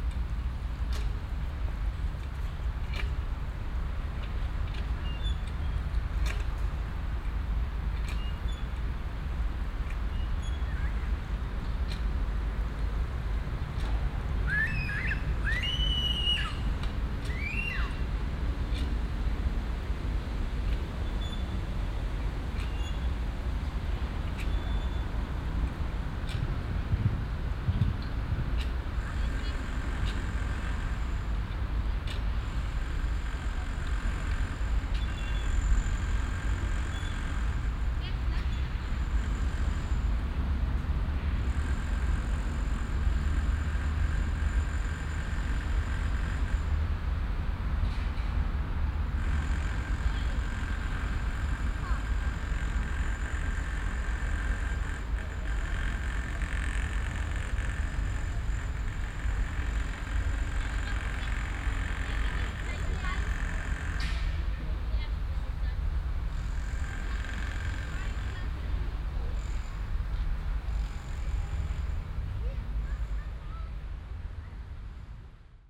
Düsseldorf, Hofgarten, Spielplatz - düsseldorf, hofgarten, spielplatz
Spielplatz im Hofgarten, am Nachmittag. im Vordergrund Leerung der Abfallbehälter durch mitarbeiter des grünflächenamtes, im Hintergrund Arbeitsgeräusche von Bauarbeiten
soundmap nrw: topographic field recordings & social ambiences